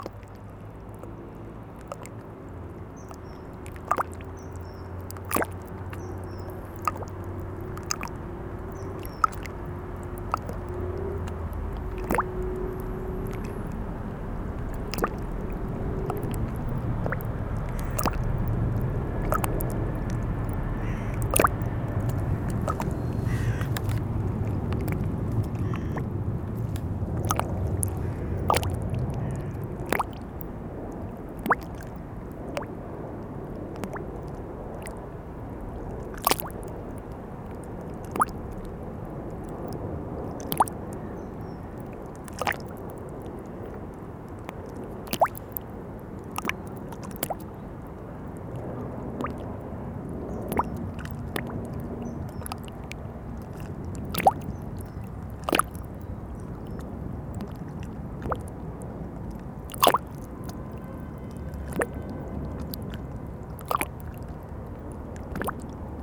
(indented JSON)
{
  "title": "Sartrouville, France - Seine river",
  "date": "2016-09-23 20:00:00",
  "description": "Sound of the Seine river flowing, on a quiet autumn evening.",
  "latitude": "48.95",
  "longitude": "2.17",
  "altitude": "19",
  "timezone": "Europe/Paris"
}